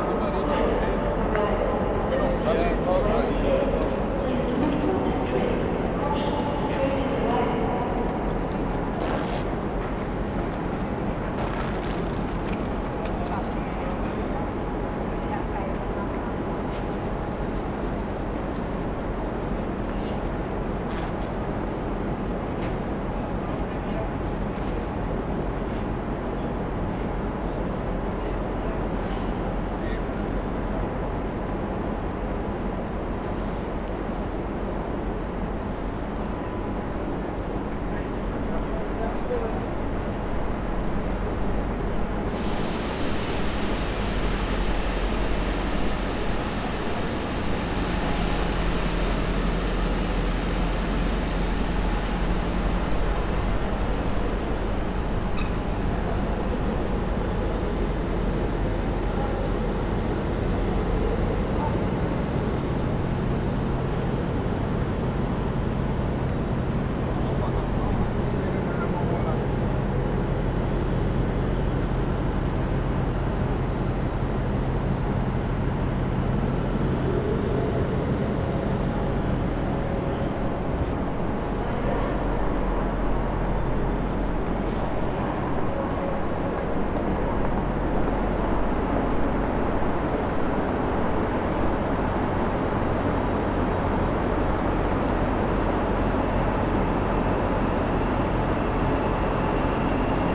{
  "title": "Am Hauptbahnhof Ebene A // gegenüber Gleis, Frankfurt am Main, Deutschland - 24. April 2020 Gleiszugang",
  "date": "2020-04-24 15:20:00",
  "description": "Starts with the escalator, but the one that leads directly into the platforms. Shortly after arriving there someone asks for money. This is one of the big differences to the time before Corona: the beggars are more bluntly asking for money. They were there before, but since there are less people and people are giving less money (like me), they have to ask more. In a recording I did.a little bit later at the trainstation of the airport a man complains that the situation has become more difficult...\nThere is an anouncement with a sound I never heard before, the voice asking people not to stay on the platform (as far as I understand).",
  "latitude": "50.11",
  "longitude": "8.66",
  "altitude": "112",
  "timezone": "Europe/Berlin"
}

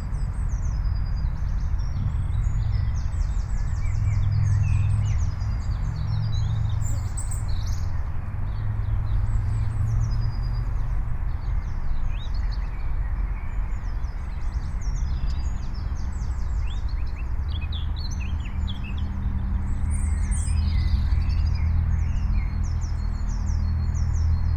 {
  "title": "Большой Тиргартен, Берлин, Германия - birds in Tiergarten",
  "date": "2012-04-01",
  "description": "Bierds are singing in Tiergarden. Sometimes cars are passing far away",
  "latitude": "52.51",
  "longitude": "13.36",
  "altitude": "36",
  "timezone": "Europe/Berlin"
}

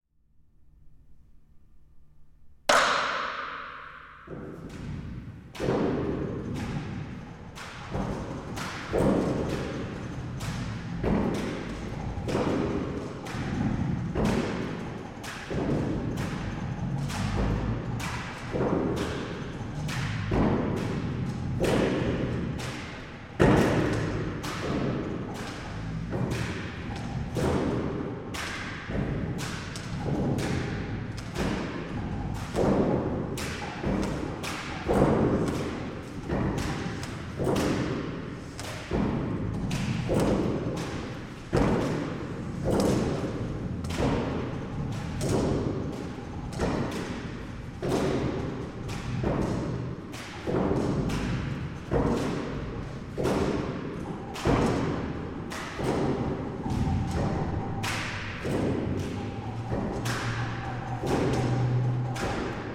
{
  "title": "Calgary old seafood market action 02",
  "description": "sound action in the empty cooler room at the former seafood market of Calgary",
  "latitude": "51.05",
  "longitude": "-114.05",
  "altitude": "1040",
  "timezone": "Europe/Tallinn"
}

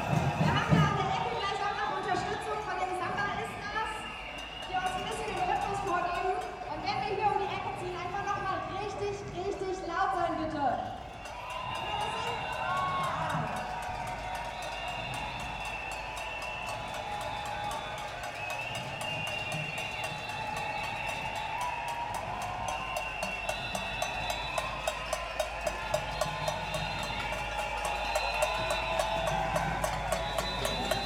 {
  "title": "Französische Straße, Berlin, Deutschland - sounds of demonstration",
  "date": "2019-01-19 14:05:00",
  "description": "Berlin, Französische Straße, sounds of a demonstration against industrial agriculture, and for an ecological agricultural change\n(Sony PCM D50)",
  "latitude": "52.52",
  "longitude": "13.40",
  "altitude": "36",
  "timezone": "Europe/Berlin"
}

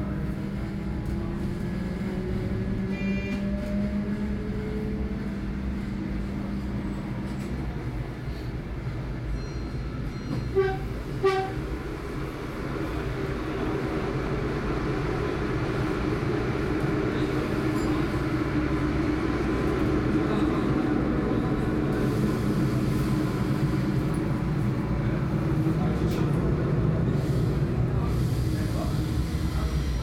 {
  "title": "Bruxelles, Belgique - Train to Haren",
  "date": "2012-08-07 12:22:00",
  "description": "Voices, ambience in the wagon.",
  "latitude": "50.88",
  "longitude": "4.40",
  "altitude": "14",
  "timezone": "Europe/Brussels"
}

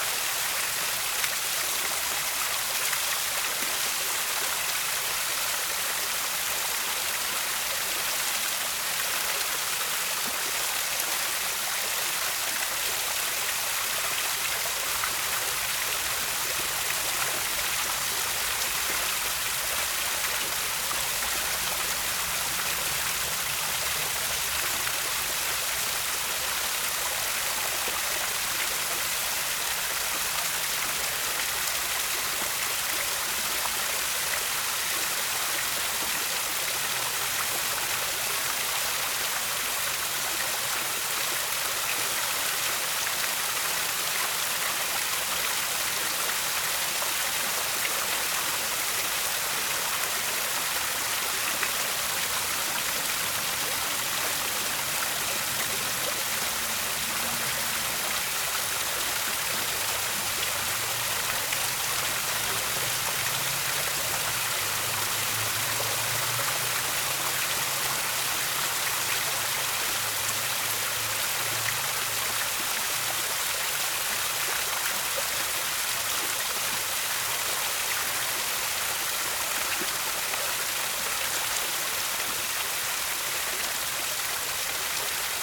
{
  "title": "Lisbon, Portugal - Luminous Fountain, Lisbon",
  "date": "2015-07-19 23:39:00",
  "description": "Luminous Fountain in Alameda, Lisbon. Recorded at night.\nZoom H6",
  "latitude": "38.74",
  "longitude": "-9.13",
  "altitude": "75",
  "timezone": "Europe/Lisbon"
}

